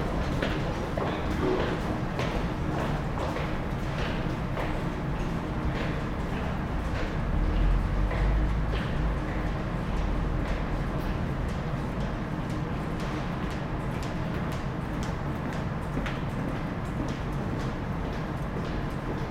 {"title": "Calgary +15 6th Ave SW bridge", "description": "sound of the bridge on the +15 walkway Calgary", "latitude": "51.05", "longitude": "-114.06", "altitude": "1054", "timezone": "Europe/Tallinn"}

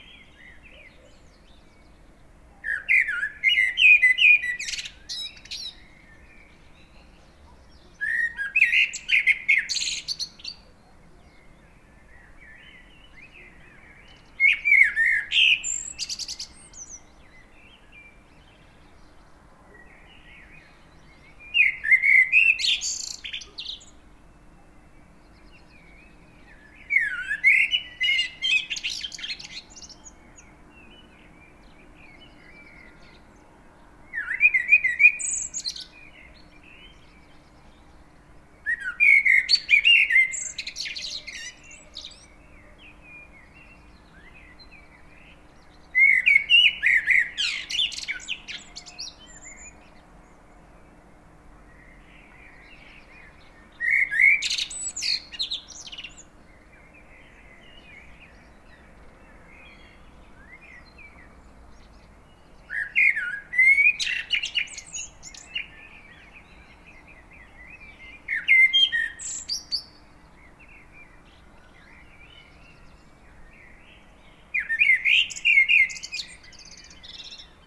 {"title": "Varaždin, Croatia - Blackbird song in early dawn", "date": "2015-04-19 05:00:00", "description": "Blackbird song from a balcony in early dawn. Recorded in XY technique.", "latitude": "46.31", "longitude": "16.34", "altitude": "174", "timezone": "Europe/Zagreb"}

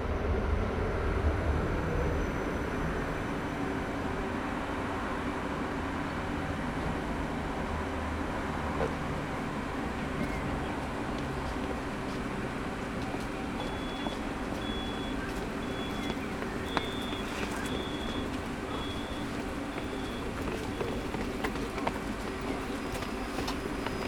{"title": "Poznan, Jezyce district, Roosevelt str. - stairs towards lower tram stop", "date": "2014-04-16 20:11:00", "description": "evening ambience around one of the main tram stops in Poznan. Despite heavy traffic birds can be heard from bushes around. that one particular bird call always draws my attention when I leave the office in the evening. trains and trams passing. people getting off trams.", "latitude": "52.41", "longitude": "16.91", "altitude": "77", "timezone": "Europe/Warsaw"}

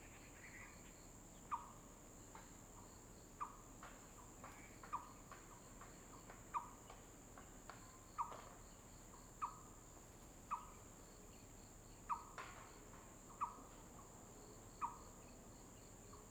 {"title": "麻園路 Mayuan Rd., Dawu Township - Various bird tweets", "date": "2018-03-23 08:57:00", "description": "traffic sound, Many kinds of bird calls\nZoom H2n MS+XY", "latitude": "22.35", "longitude": "120.89", "altitude": "30", "timezone": "Asia/Taipei"}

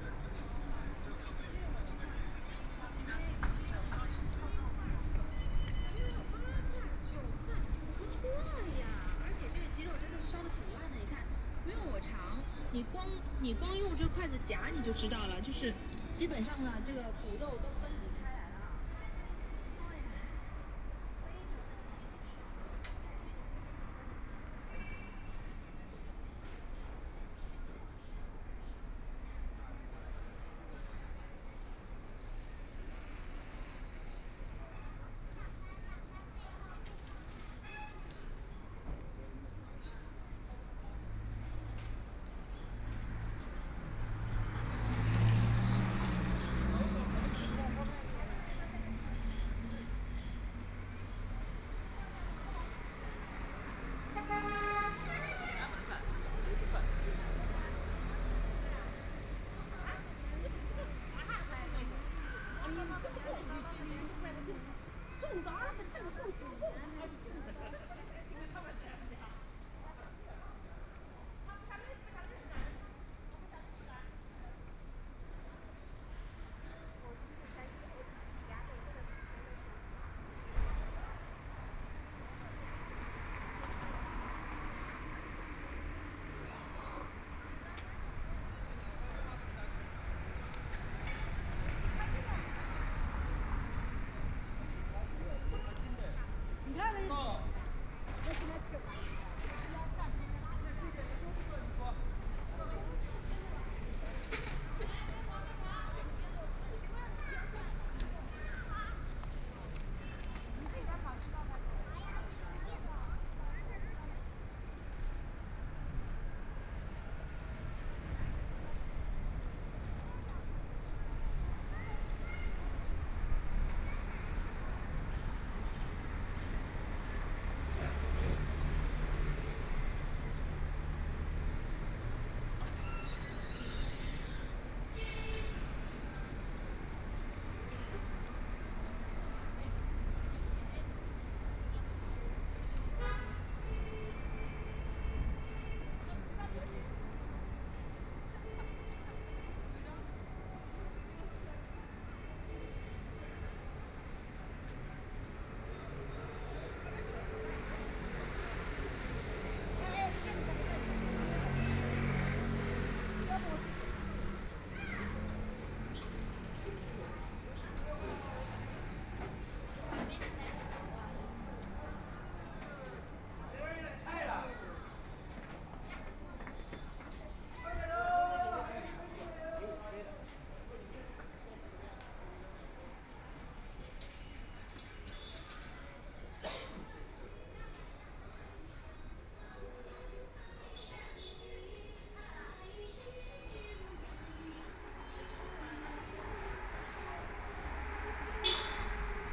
{"title": "Tianjin Road, Shanghai - sounds on the street", "date": "2013-11-25 16:24:00", "description": "Various sounds on the street, Traffic Sound, Shopping street sounds, The crowd, Bicycle brake sound, Trumpet, Brakes sound\nFootsteps, Binaural recording, Zoom H6+ Soundman OKM II", "latitude": "31.24", "longitude": "121.48", "altitude": "12", "timezone": "Asia/Shanghai"}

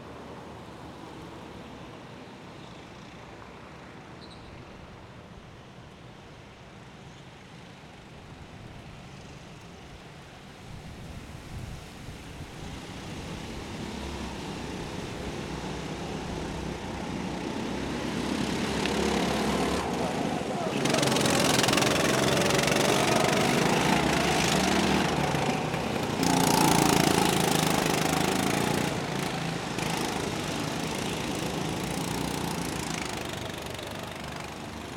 {"title": "Brussels, Belgium - Go-kart racing", "date": "2013-06-30 17:52:00", "description": "This is a recording made at Udo's request during a recent adventure in Brussels! We were walking to the final venue for the Tuned City Festival when we heard the wonderful sonorities of go-karting cascading down the street. Udo asked me to record the sound for him, so here is what I heard outside, looking across the tarmac and watching the drivers. ENJOY! Recorded with Audio Technica BP4029 stereo shotgun and FOSTEX FR-2LE recorder.", "latitude": "50.91", "longitude": "4.42", "altitude": "14", "timezone": "Europe/Brussels"}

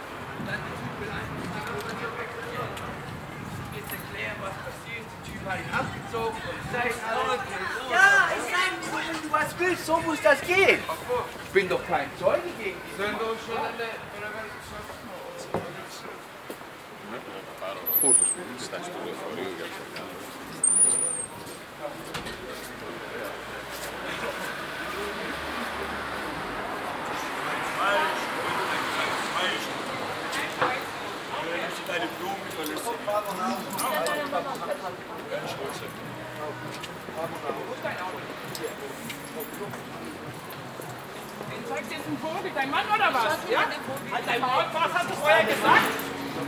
{"title": "frankfurt, Rotlichtviertel, Strasse Nachtszene - frankfurt, rotlichtviertel, strasse nachtszene", "date": "2008-04-09 15:25:00", "description": "kleine öffentliche auseinandersetzung in der lokalen gewerbe szene\nproject: social ambiences/ listen to the people - in & outdoor nearfield recordings", "latitude": "50.11", "longitude": "8.67", "altitude": "106", "timezone": "Europe/Berlin"}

